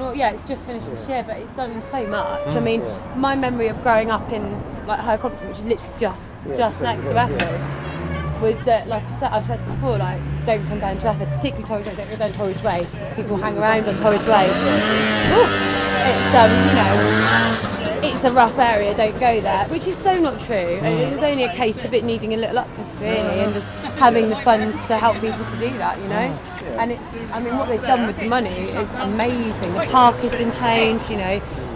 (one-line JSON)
{"title": "Efford Walk Two: Regeneration - Regeneration", "date": "2010-09-24 17:29:00", "latitude": "50.39", "longitude": "-4.11", "altitude": "90", "timezone": "Europe/London"}